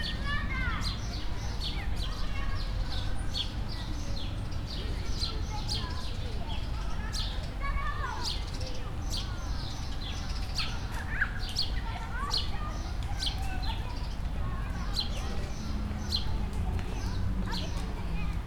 Poznan, Lazarz district, Wilsons Park, kids playing
kids playing and singing on a patch of grass
18 July 2010, ~11:00